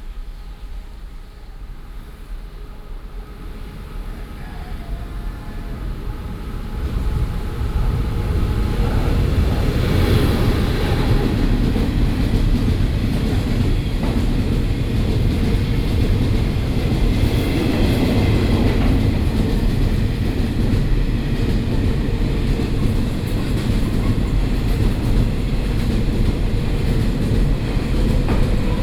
Fuzhou Station, Banqiao Dist. - In the station platform
Train travel through
Binaural recordings
Sony PCM D50 + Soundman OKM II